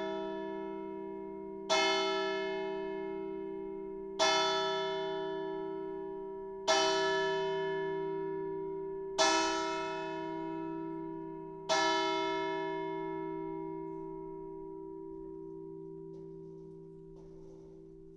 Opatje selo, Miren, Slovenija - audio Church Bell On Sunday At 7am In Opatje Selo
The clock and wake-up call on Sundays at 7am from local church.
Recorded with ZOOM H5 and LOM Uši Pro, AB Stereo Mic Technique, 40cm apart.
9 February 2020, 06:59